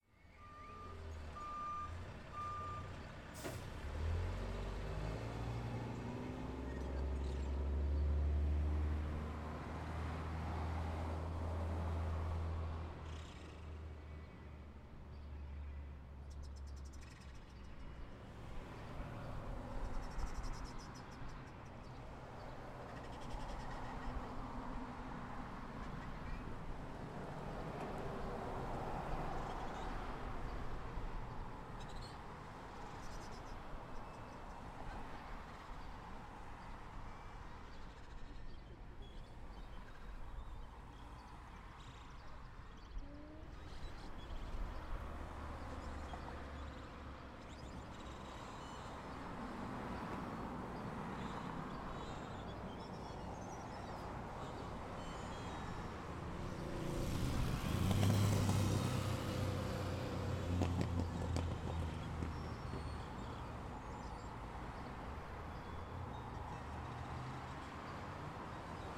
Morning traffic noise along Western Avenue, captured from a parking lot at the intersection of Ardsley Road in Guilderland, New York. With some radio sounds in the background.